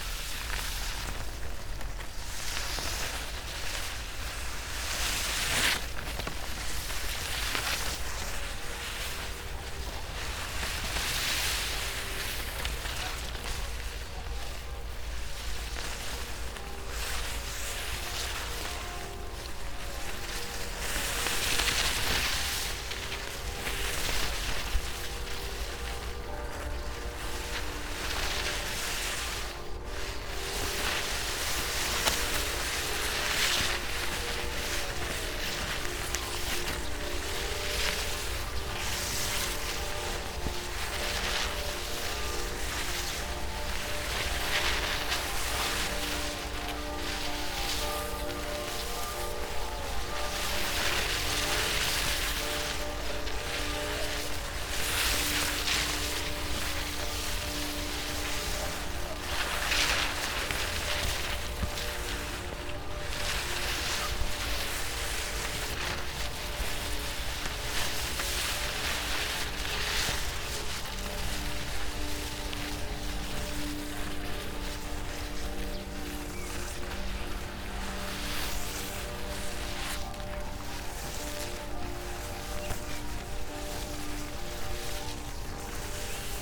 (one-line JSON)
{"title": "path of seasons, vineyard, piramida - walk through overgrown footpath with unfolded scroll book", "date": "2014-06-01 18:46:00", "description": "long strips of paper over high grass ... and, unfortunately, broken snail", "latitude": "46.57", "longitude": "15.65", "altitude": "330", "timezone": "Europe/Ljubljana"}